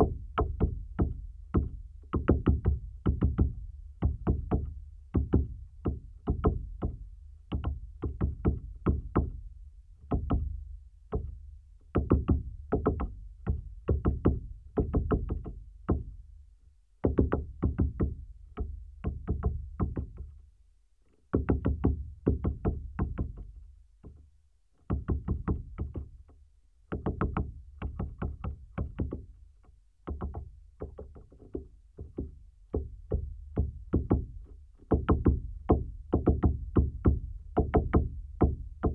Utena, Lithuania, woodpecker work session
cold sunny day. about -15 degrees of Celcius. a pair of contact mics on dead pine tree. the woodpecker fly on, works, fly out.